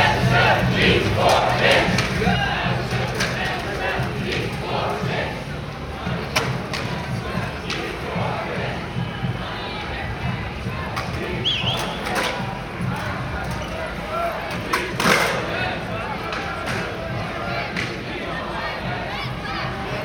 Leuvehaven, Rotterdam, Netherlands - Huizen voor mensen, niet voor winst
Huizen voor mensen, niet voor winst. Recording of a demonstration calling for an end to the housing crisis in the Netherlands. At the beginning and the end of the recording, it is possible to listen to a few skaters, they usually gather in an open space in front of the Maritime Museum. It is also possible to listen to two groups chanting "Huizen voor mensen, niet voor winst", to a speaker someone brought to lay music and a group of drummers. The demonstration would walk towards the city center to finally reach Blaak.
Zuid-Holland, Nederland, 17 October